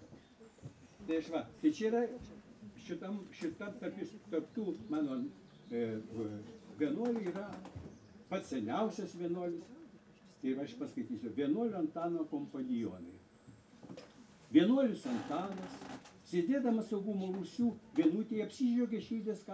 Lithuania, Juknenai, poetry event
lithuanian poet Algimantas Baltakis speaks